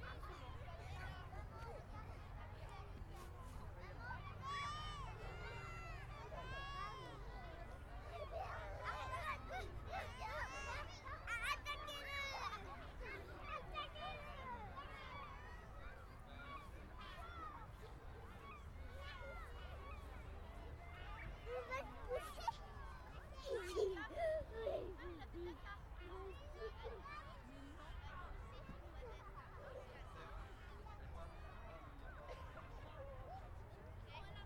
Le Touquet-Paris-Plage, France - Le Touquet - Plage
Le Touquet
Ambiance de plage un dimanche d'hiver ensoleillé